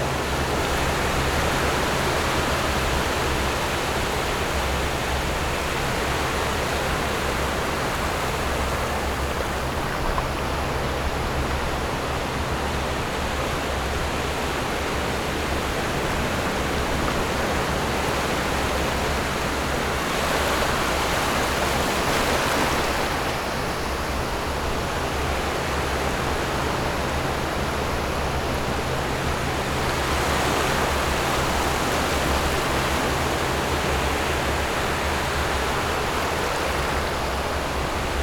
{"title": "頭城鎮港口里, Yilan County - Sound of the waves", "date": "2014-07-29 13:43:00", "description": "Hot weather, In the beach, Sound of the waves, There are boats on the distant sea\nZoom H6 MS+ Rode NT4", "latitude": "24.88", "longitude": "121.84", "timezone": "Asia/Taipei"}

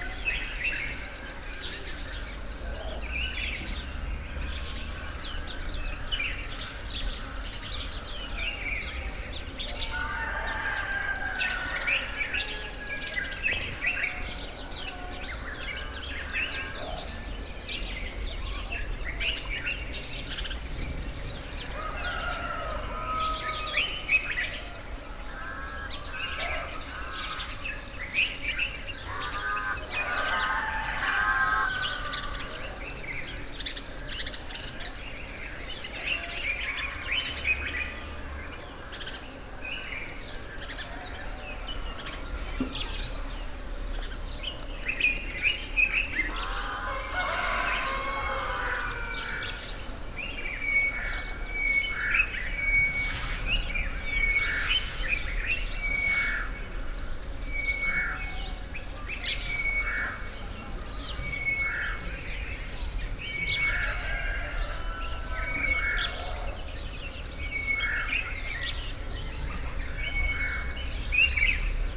{"title": "Mission school guest house, Chikankata, Zambia - early morning Chikankata", "date": "2018-09-05 06:30:00", "description": "listening to morning bird song fading while daily life picking up around the guest house....", "latitude": "-16.23", "longitude": "28.15", "altitude": "1253", "timezone": "Africa/Lusaka"}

